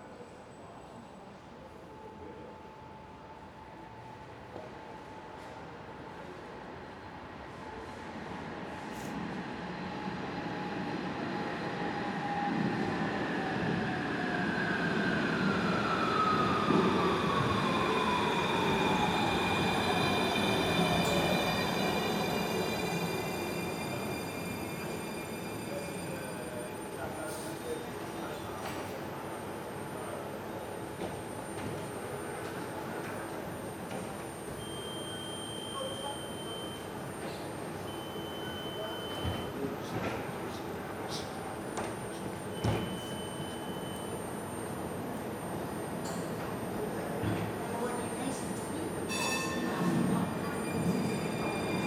Grote Markt, Den Haag - trams in de tramtunnel